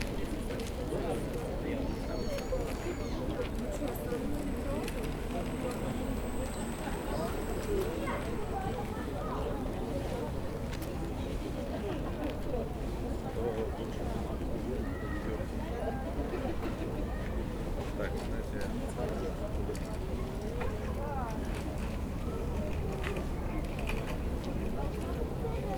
Cherry blossoms in the Japanese garden. May 6, 2022. The entry was made in front of the entrance to the garden.